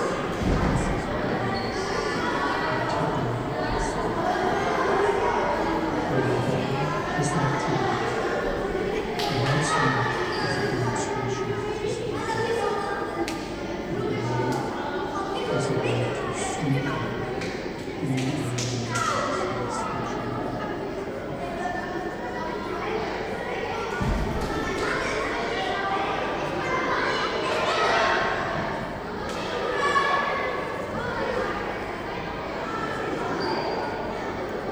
ZKM Museum
children at the intrance hall of the museum and sound of the installation of Alvin Lucier I ma sitting in the room
Karlsruhe, Germany, 4 November